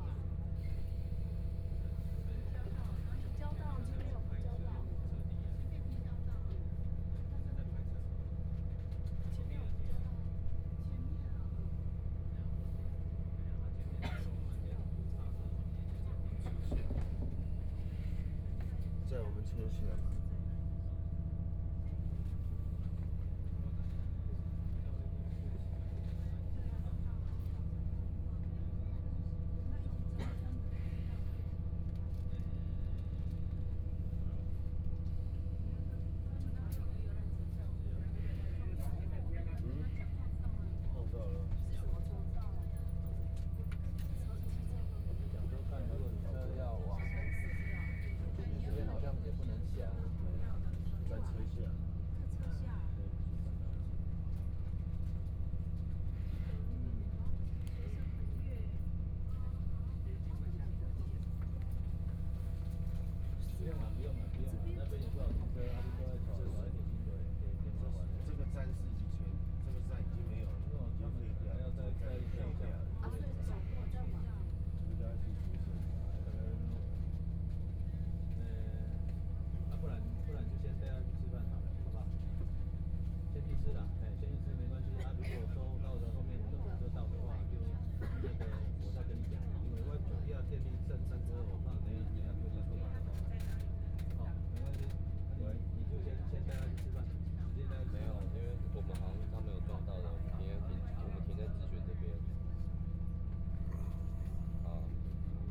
Interior of the case, The dialogue between the passenger, Train message broadcasting, This recording is only part of the interceptionTrain Parking, Binaural recordings, Zoom H4n+ Soundman OKM II